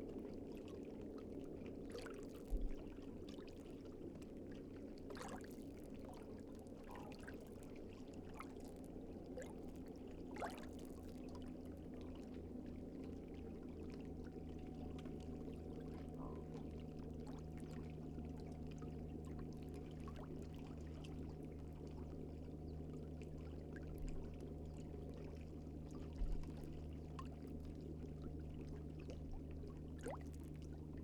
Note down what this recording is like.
GRENOUILLE BATEAU DE PÊCHEUR AU LOINTAIN ET LAC, SD MixPre6II, couple MS 4041/MKH30 dans Cinela PIA2